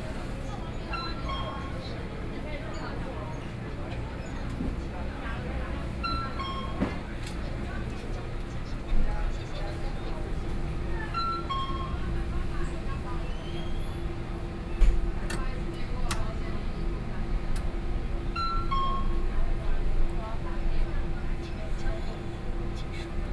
Qingdao E. Rd., Taipei City - In convenience stores
In convenience stores, Binaural recordings, Sony PCM D50 + Soundman OKM II
Zhongzheng District, Taipei City, Taiwan